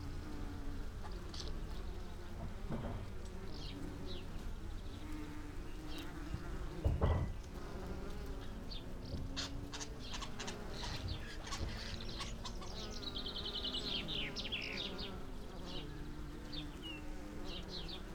bees on lavender ... SASS between two lavender bushes ... bird song ... calls ... from ... starling ... song thrush ... house sparrow ... blackbird ... house martin ... collared dove ... background noise ... traffic ...